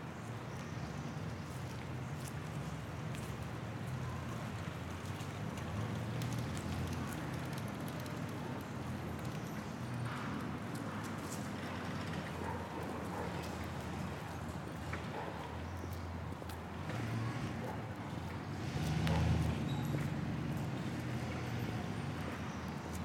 Cra., Bogotá, Colombia - Sidewalk path in North Bogota
This place is a sidewalk path in a high-income neighborhood, located in the north of Bogotá. This place has a partially crowded environment where you can hear people walking, birds, a dog, children playing in the distance and a plane.
This plane is noisy, you can also hear in the distance some vehicles passing. The audio was recorded in the afternoon, specifically at 5 pm. The recorder that we used was a Zoom H6 with a stereo microphone and a xy technique.
Región Andina, Colombia, 18 May 2021